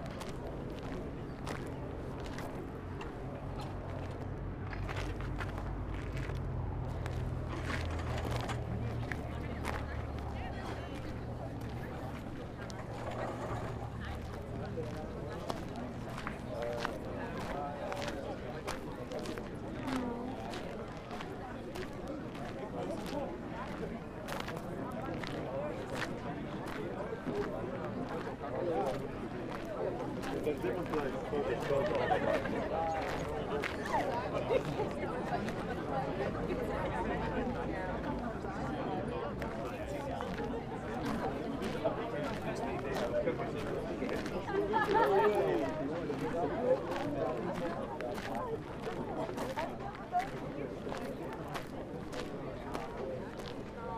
Südstadt, Bonn, Deutschland - Spring evening at Bonn
Churchbells ringing, a helicopter flying above, people are sitting on the lawn in front of the University of Bonn, chatting, drinking their first spring beer, playing guitar, wearing t-shirts. I walk towards the Biergarten packed with people who ssem to have switched immedeately from winter to spring/summer mood.
Bonn, Germany, 22 March 2012